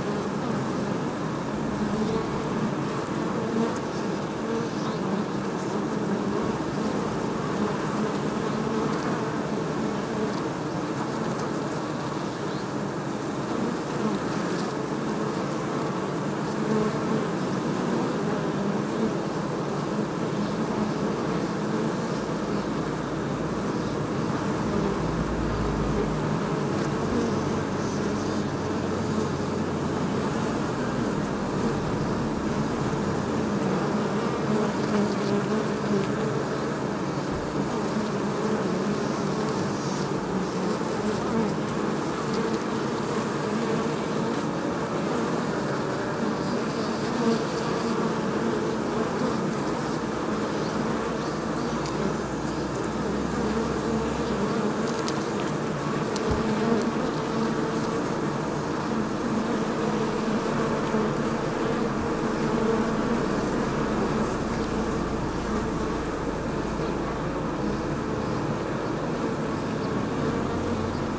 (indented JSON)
{
  "title": "Beehives at Byeonsan",
  "date": "2017-05-05 10:00:00",
  "description": "Man-made beehives at ground level within Byeonsan National Park",
  "latitude": "35.64",
  "longitude": "126.58",
  "altitude": "61",
  "timezone": "Asia/Seoul"
}